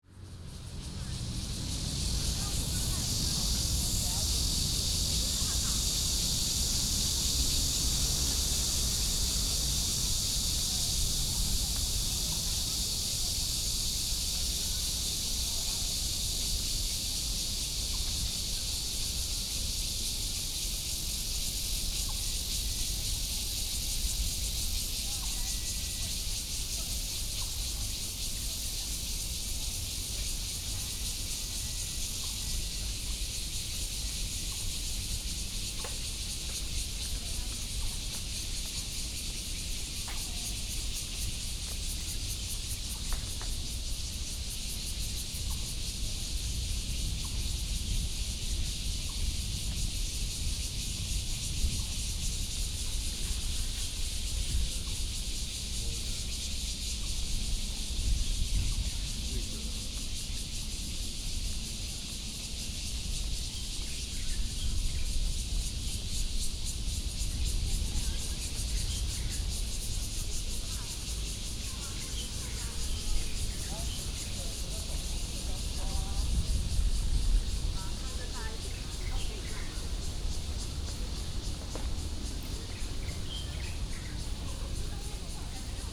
{
  "title": "Zhoushan Rd., National Taiwan University - Walking on the road",
  "date": "2015-06-28 17:08:00",
  "description": "Cicadas cry, Bird calls, Traffic Sound, Visitor, walking In the university",
  "latitude": "25.01",
  "longitude": "121.54",
  "altitude": "13",
  "timezone": "Asia/Taipei"
}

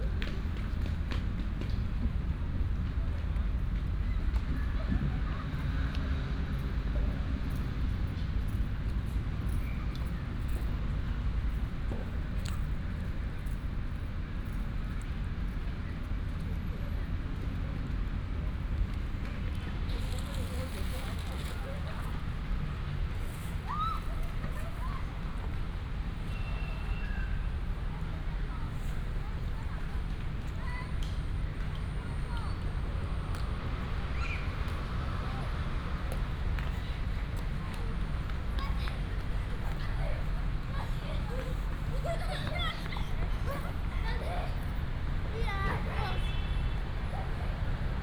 {
  "title": "三信公園, Xitun Dist., Taichung City - walking in the Park",
  "date": "2017-04-29 18:31:00",
  "description": "walking in the Park, Traffic sound, tennis court",
  "latitude": "24.17",
  "longitude": "120.66",
  "altitude": "104",
  "timezone": "Asia/Taipei"
}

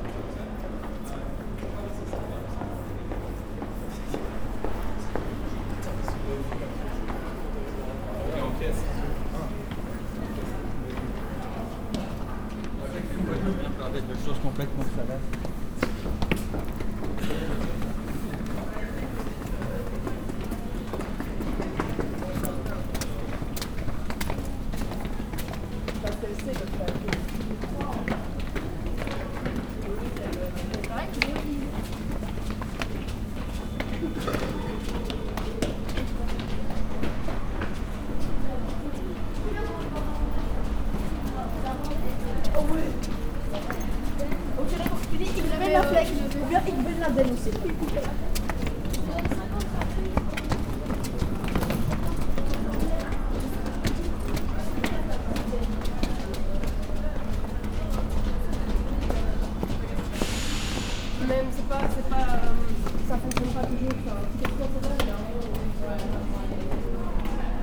{
  "title": "Centre, Ottignies-Louvain-la-Neuve, Belgique - 8h01 train",
  "date": "2016-03-18 08:01:00",
  "description": "On the 8h01 train, a very massive arrival of students, climbing stairs. They are called daylight students, counter to the students living in \"kots\", who are called night-students, as they are living here in LLN (the short name of Louvain-La-Neuve). There's no segregation between us, really not, but they dont have the same life.",
  "latitude": "50.67",
  "longitude": "4.62",
  "altitude": "121",
  "timezone": "Europe/Brussels"
}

